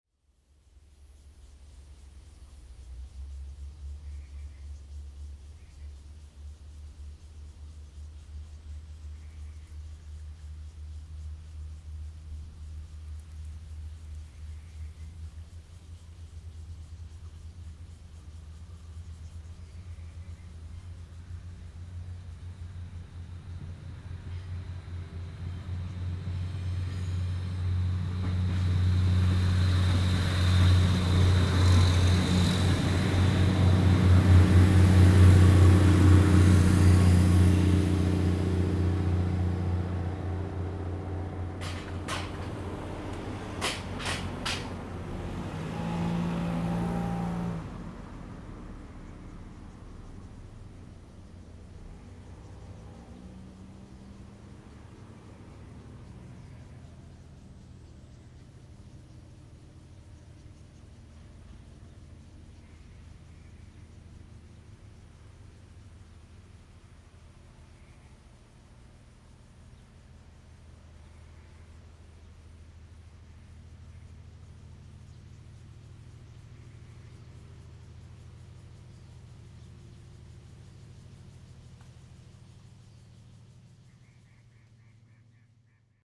大王村, Taimali Township - Train traveling through
Train traveling through
Zoom H2n MS +XY
Taitung County, Taiwan, 5 September, 09:44